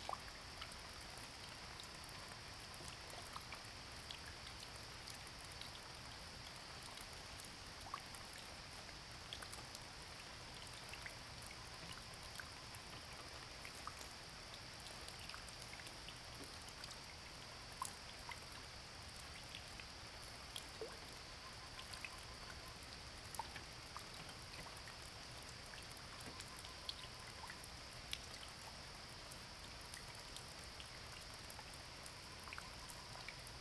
{
  "title": "種瓜路45-1, 埔里鎮桃米里 - Rain and bird sound",
  "date": "2016-09-14 05:39:00",
  "description": "early morning, Rain sound\nZoom H2n MS+XY",
  "latitude": "23.95",
  "longitude": "120.91",
  "altitude": "598",
  "timezone": "Asia/Taipei"
}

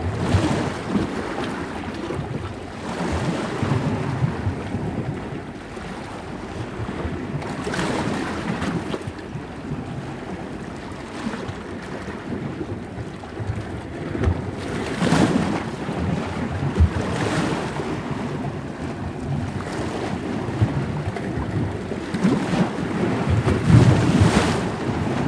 {
  "title": "Insel Hiddensee, Deutschland - On the beach, Hiddensee - water between rocks at night",
  "date": "2011-10-16 22:14:00",
  "description": "On the beach, Hiddensee - water between rocks at night. [I used the Hi-MD-recorder Sony MZ-NH900 with external microphone Beyerdynamic MCE 82]",
  "latitude": "54.59",
  "longitude": "13.10",
  "altitude": "5",
  "timezone": "Europe/Berlin"
}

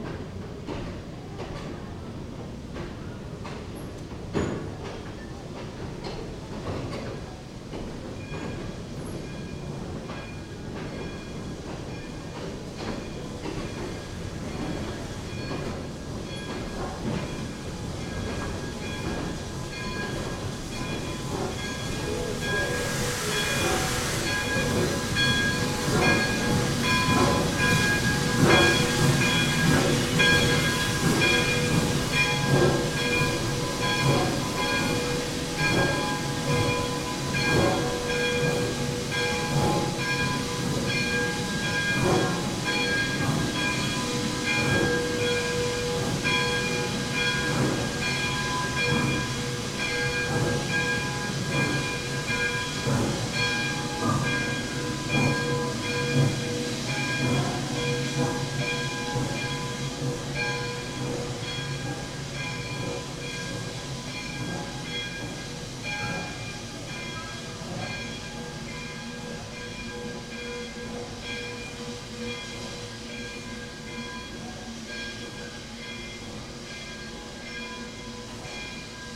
{
  "title": "Prichard Barn, S Campbell St, Abilene, KS, USA - From Inside the Barn",
  "date": "2017-09-02 16:51:00",
  "description": "From the second story of the 1915 Prichard Barn, located on the grounds of the Dickinson County Heritage Center, a number of sounds are heard. Just to the south, the Abilene & Smoky Valley Railroads steam engine (Santa Fe 4-6-2- Pacific #3415) passes by. To the northwest, the Centers 1901 C.W. Parker carousel operates, as a visitor rings the bell near the schoolhouse (northeast). Further to the south, amplified sounds from the Trails, Rails & Tales festival can be heard, followed by footsteps on the wood floor. Stereo mics (Audiotalaia-Primo ECM 172), recorded via Olympus LS-10.",
  "latitude": "38.91",
  "longitude": "-97.21",
  "altitude": "349",
  "timezone": "America/Chicago"
}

